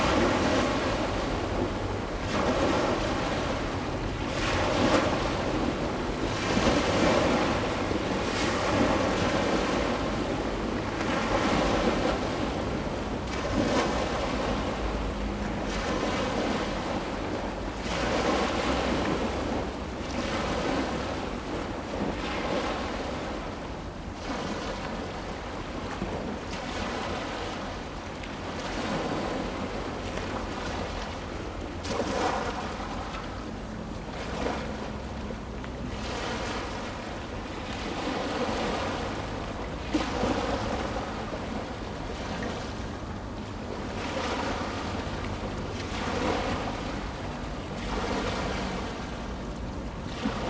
Sec., Zhongzheng Rd., Tamsui Dist.新北市 - the river sound
The sound of the river, traffic sound, Small pier
Zoom H2n MS+XY +Spatial audio
New Taipei City, Taiwan, 24 August 2016, ~18:00